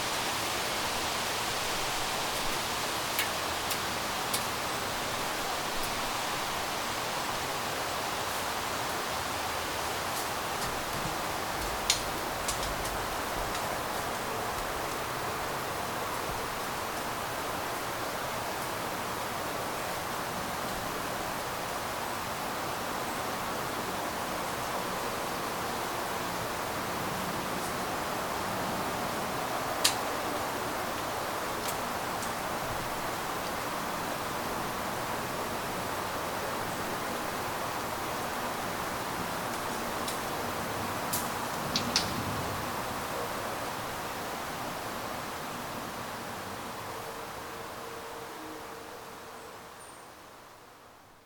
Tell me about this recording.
A recording made outside of an apartment on a beautiful, windy fall day. The recording includes leaves being blown about, nuts falling from trees, wind chimes, and, of course, vehicles driving through/past the neighborhood. The recording was made using a laptop, audacity, and a Samson Go mic, plus whatever wind protection I was using (probably no more than a simple pop filter, but I can't remember at this point). Recorded in mono.